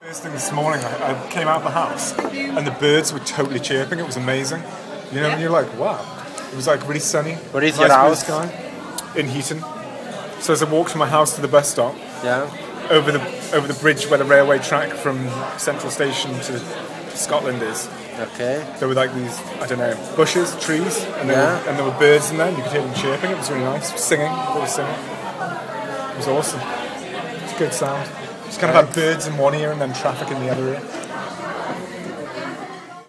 Sonic Memories, interview series. Asking people memories about sound.
Tyne and Wear, UK